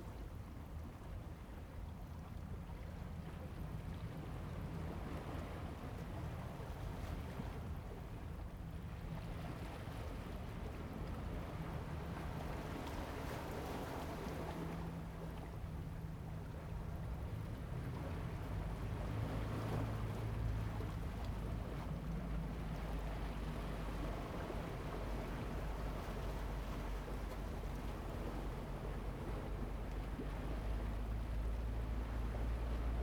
港南風景區, Xiangshan Dist., Hsinchu City - Sound of the waves and fighters
Sound of the waves, There are fighters taking off in the distance, Zoom H2n MS+XY
Hsinchu City, Taiwan, 21 September, 08:39